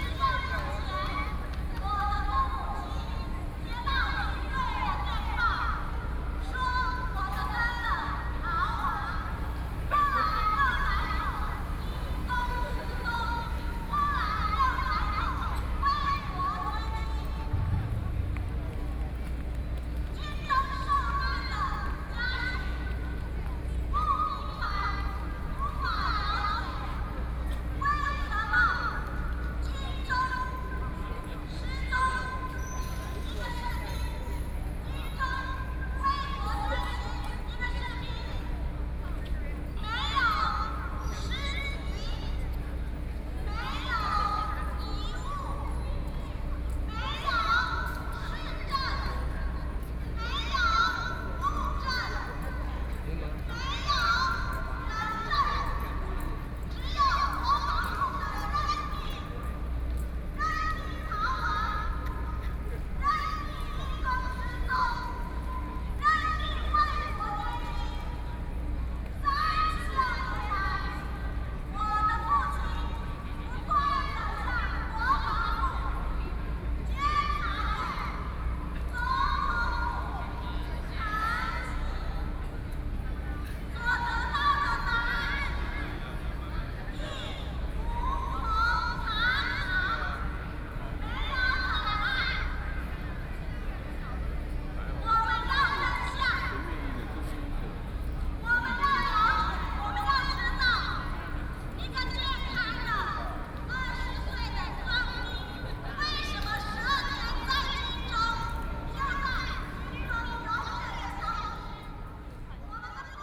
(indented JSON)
{
  "title": "Xinyi Road - A noncommissioned officer's death",
  "date": "2013-08-03 20:32:00",
  "description": "Protest against the government, A noncommissioned officer's death, Turned out to be a very busy road traffic, Sony PCM D50 + Soundman OKM II",
  "latitude": "25.04",
  "longitude": "121.52",
  "altitude": "34",
  "timezone": "Asia/Taipei"
}